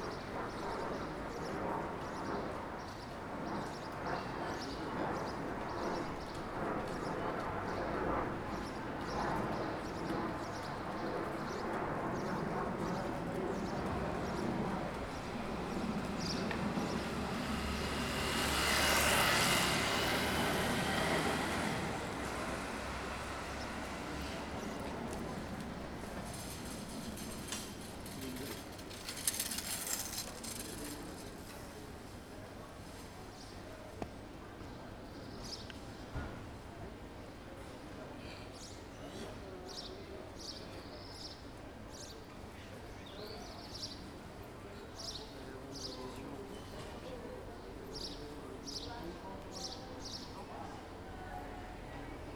Rue Gabriel Péri, Saint-Denis, France - Intersection of R. Lanne + R. Gabriel Péri
This recording is one of a series of recording, mapping the changing soundscape around St Denis (Recorded with the on-board microphones of a Tascam DR-40).